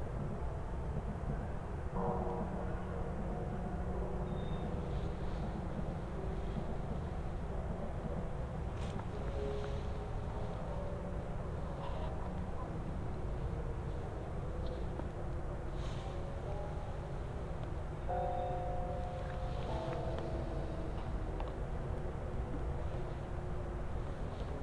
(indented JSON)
{
  "title": "Tateiricho, Moriyama, Shiga Prefecture, Japan - New Year 2017 Temple Bells and Fireworks",
  "date": "2017-01-01",
  "description": "New Year's Eve temple bells, car traffic, and a few trains. At midnight fireworks announce the beginning of 2017, and a jet aircraft passes overhead. Recorded with an Audio-Technica BP4025 stereo microphone and a Tascam DR-70D recorder, both mounted on a tripod.",
  "latitude": "35.05",
  "longitude": "136.01",
  "altitude": "107",
  "timezone": "GMT+1"
}